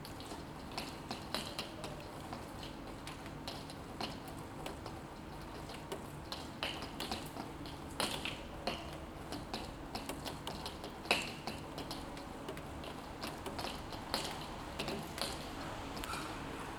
Gràcia, Barcelona, Spain - Morning rain, June 25th 2015
Morning rain recorded from a window facing a courtyard using Zoom H2n.
25 July 2015, ~08:00